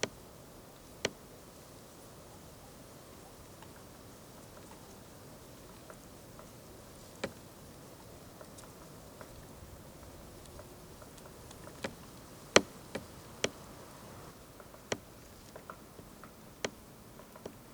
Lithuania, Utena, tree cracking in frost
in a march. tree cracking in wintery frost